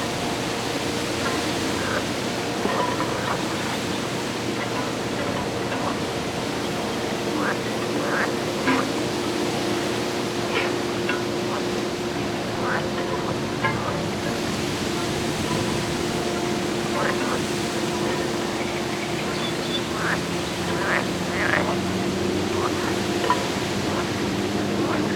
{"title": "Ptasi Raj, Gdańsk, Poland - Grobla żaby 2", "date": "2015-06-07 10:49:00", "description": "Grobla żaby 2, rec. Rafał Kołacki", "latitude": "54.36", "longitude": "18.79", "timezone": "Europe/Warsaw"}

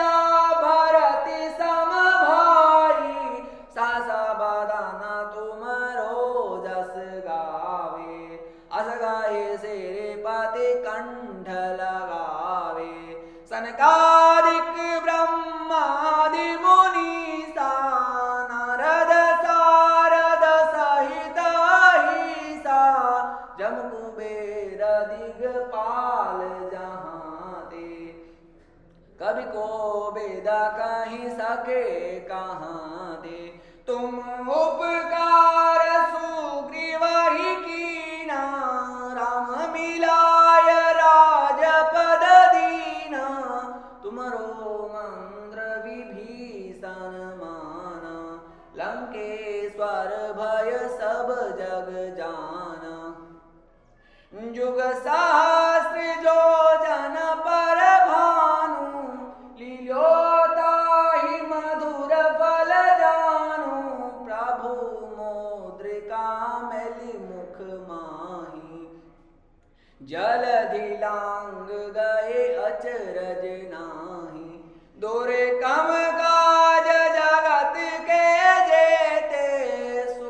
{"title": "Jaisalmer, Gadisar lake temple", "date": "2010-12-09 14:45:00", "description": "gadisar lake temple sur le tournage de RANI", "latitude": "26.91", "longitude": "70.92", "altitude": "229", "timezone": "Asia/Kolkata"}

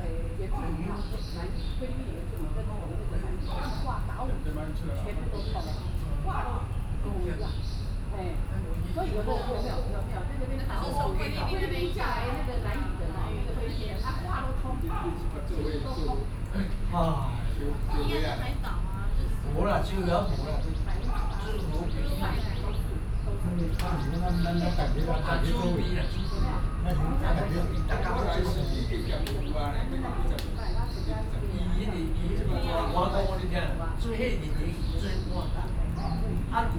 {"title": "Taipei Botanical Garden, Taiwan - Chat", "date": "2012-06-04 17:27:00", "description": "a group of people are sharing the history （Aboriginal history）of recording locations, Sony PCM D50 + Soundman OKM II", "latitude": "25.03", "longitude": "121.51", "altitude": "13", "timezone": "Asia/Taipei"}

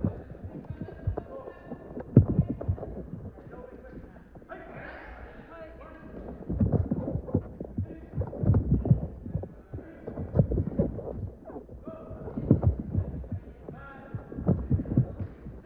{"title": "Bradfield, Reading, West Berkshire, UK - Karate Grading (Contacts)", "date": "2015-11-01 11:30:00", "description": "Pair of contact microphones picking up the thuds, squeaks and call and response shouts of those undertaking their karate gradings at Bradfield College. This section was recorded during the set-piece 'katas' following the instruction of the teacher or 'Sensei'. Recorded using a Tascam DR-680 MKII and JRF Audio contact microphones.", "latitude": "51.45", "longitude": "-1.13", "altitude": "59", "timezone": "Europe/London"}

